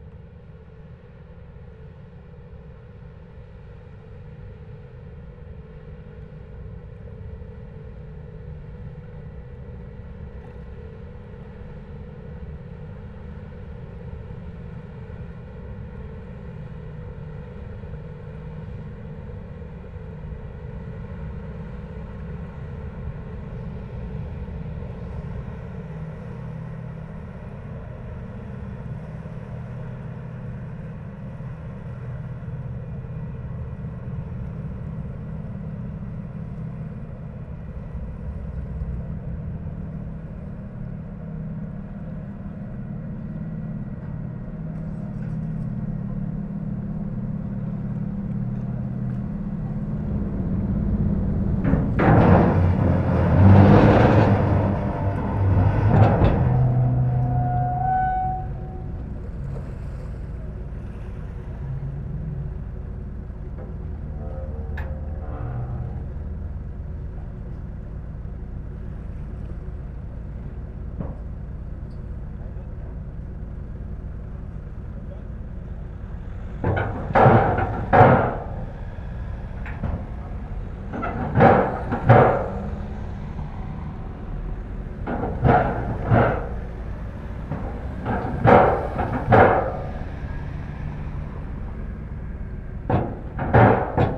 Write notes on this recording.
Recording of Sahurs - La Bouille ferry, charging cars in aim to go to La Bouille, from the Seine bank.